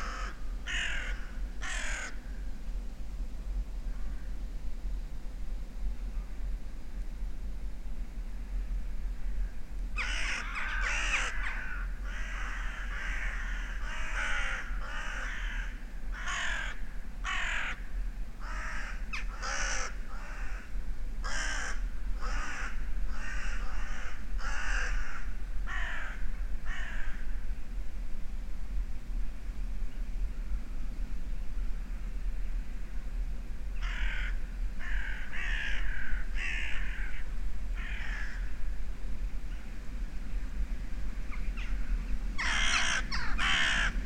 Pakalniai, Lithuania, in old oaks hollow
Old oak with burnt out hollow. I placed small microphones in the hollow to listen...crows flying overhead
Utenos apskritis, Lietuva, 2 November 2019, 15:30